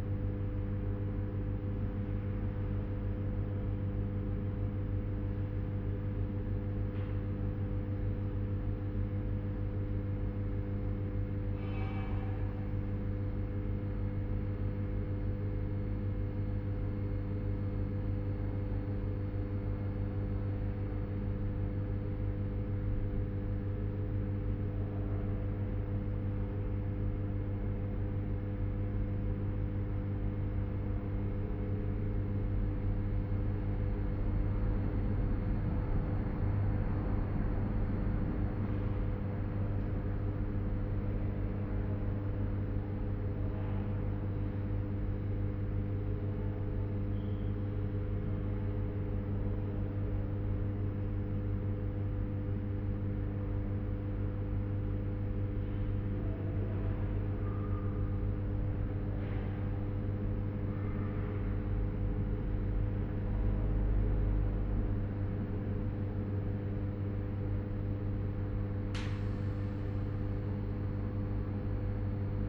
Altstadt, Düsseldorf, Deutschland - Düsseldorf, Hetjens Museum
Inside an exhibition hall of the Hejens Ceramic Museum. The humming of the electricity and in the distance some voices out of the close by office in the silence of the hall.
This recording is part of the intermedia sound art exhibition project - sonic states
soundmap nrw - sonic states, social ambiences, art places and topographic field recordings